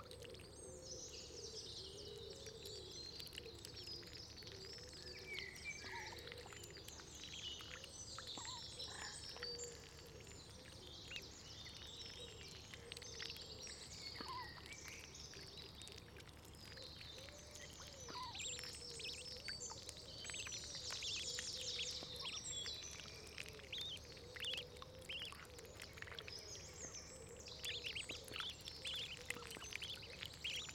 Atlantic Pond, Ballintemple, Cork, Ireland - Ducklings Feeding
A mother duck bringing her nine ducklings to feed in a small muddy puddle in the grass on the edge of the pond. The ducklings are very quiet at the start of the recording as I wanted to keep the sense of them approaching, but by the middle they're right up next to the microphone. I'd seen them use this puddle the previous day so in the morning I left my microphone there and waited for them to come along. Their cheeps, wing splashes, beak snaps and bloops, and the sounds the mother uses to talk to her chicks are all amazing. While they were feeding two hooded crows flew over (to try and catch a duckling for breakfast). Mother duck chased them away, and you can hear me running across to scare the crows too. Recorded with a Zoom H1.